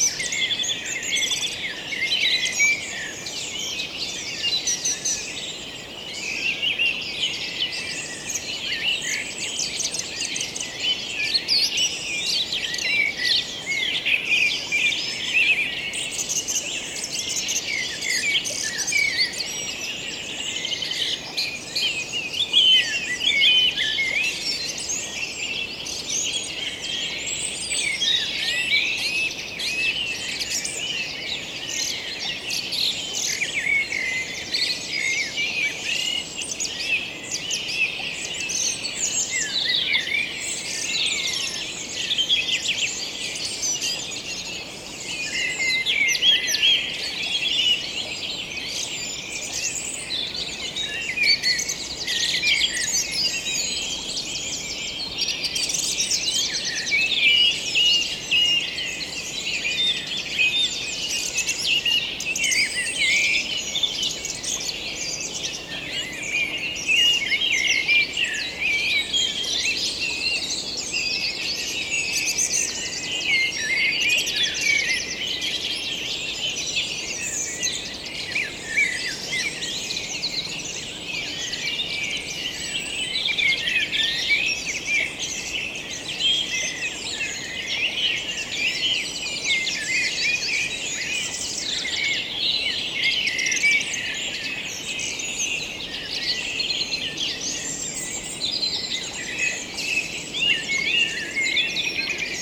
Beaufort, France - Birds waking up during summer time
They began 4:38 AM ! I'm sleeping outside, a great night just left alone on the green grass. And... ok, it's summer time and they began early ! Smashed, I just have a few forces to push on... and let the recorder work. It's a beautiful morning with blackbirds.
8 June 2017, 04:50